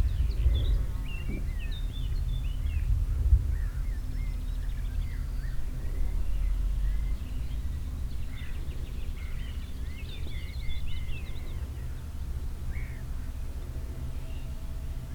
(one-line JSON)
{"title": "Morasko, Krakowiakow i Gorali road - break during a bike trip", "date": "2015-05-24 13:06:00", "description": "taking a break during a bike trip on a field road leading to the back of the municipal landfill. very mellow, hot afternoon. rural area ambience. having a snack do some sounds of chewing can be heard. three bikers swooshing by.", "latitude": "52.49", "longitude": "16.90", "altitude": "94", "timezone": "Europe/Warsaw"}